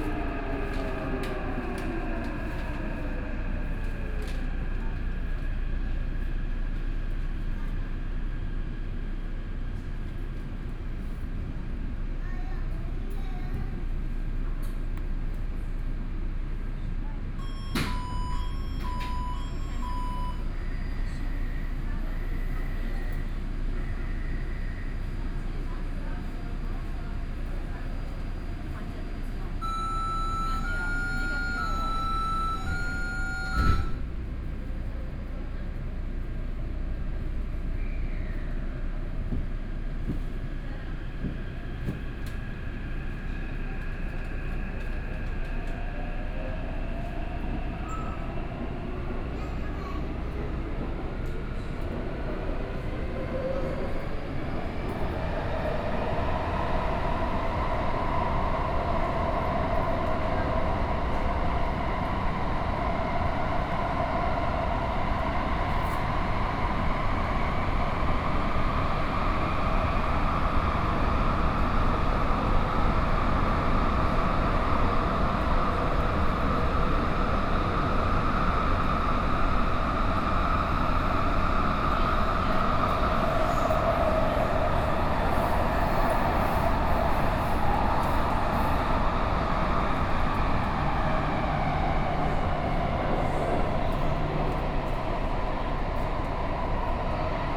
Sanchong District, New Taipei City - Luzhou Line (Taipei Metro)
from Minquan West Road Station to Sanmin Senior High School Station, Binaural recordings, Sony PCM D50 + Soundman OKM II